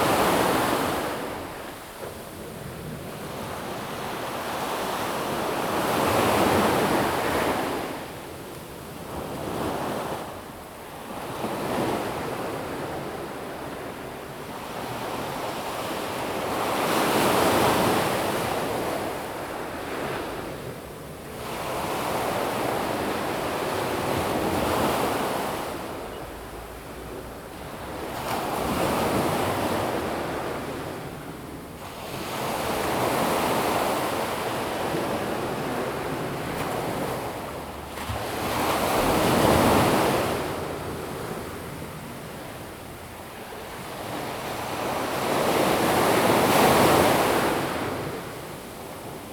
芝蘭公園, 三芝區後厝里, New Taipei City - the waves
Big Wave, Sound of the waves
Zoom H2n MS+H6 XY
15 April 2016, ~08:00